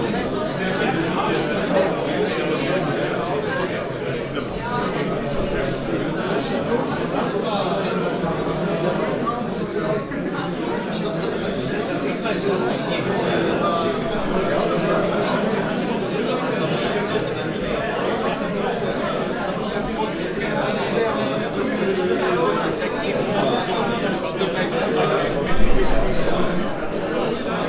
hosingen, nature park house, exhibition
Inside the nature park house at the opening of the permanent nature park exhibition. The sound of the exhibition guests conversation.
Im Naturparkhaus zur Eröffnung der Naturprak Dauerausstellung. Der Klang der Unterhaltungen der Ausstellungsbesucher.
maison du parc - expo